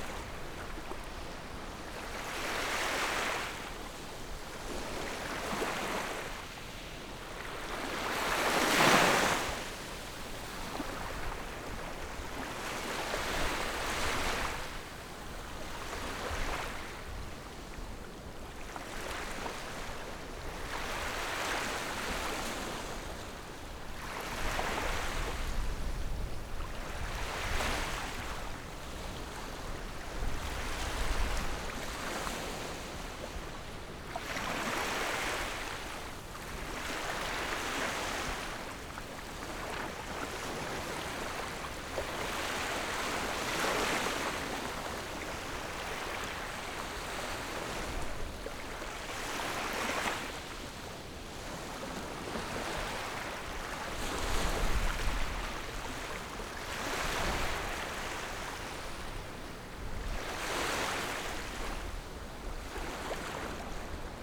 大菓葉漁港, Xiyu Township - Small beach
Small beach, Sound of the waves
Zoom H6+Rode NT4
Penghu County, Xiyu Township, 22 October 2014, 15:12